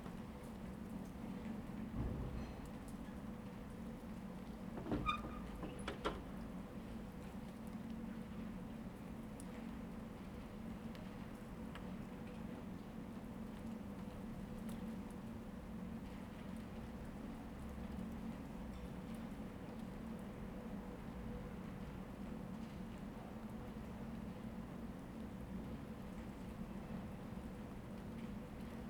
"Winter high noon with Des pas sur la neige and final plane in the time of COVID19": soundscape.
Chapter CL of Ascolto il tuo cuore, città. I listen to your heart, city
Monday December 28th 2020. Fixed position on an internal terrace at San Salvario district Turin, more then six weeks of new restrictive disposition due to the epidemic of COVID19.
Start at 11:44 a.m. end at 00:21 p.m. duration of recording 40’53”